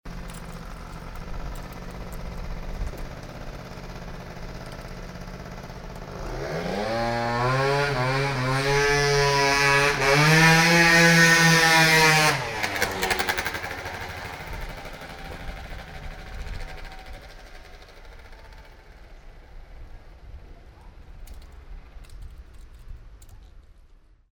audresseles, zweitakter in enger gasse
typischer französischer zweitakter, rasant in enger gasse
fieldrecordings international: social ambiences/ listen to the people - in & outdoor nearfield recordings